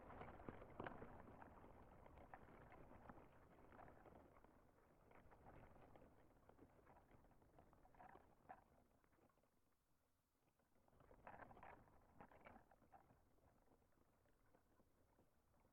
Contact microphone attached to the branches, the spiky seed-heads danced in the breeze.
Auckland, New Zealand, 18 August 2020, 14:36